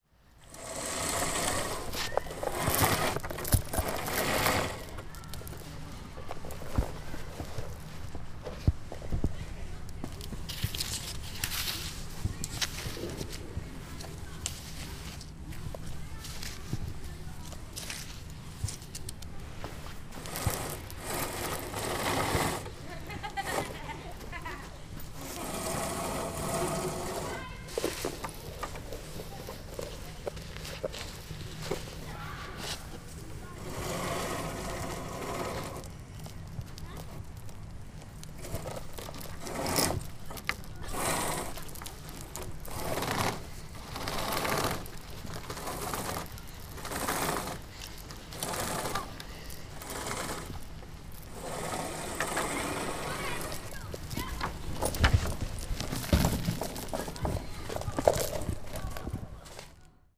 playground sounds, munich, lela
Munich, Germany, 17 November 2010, ~14:00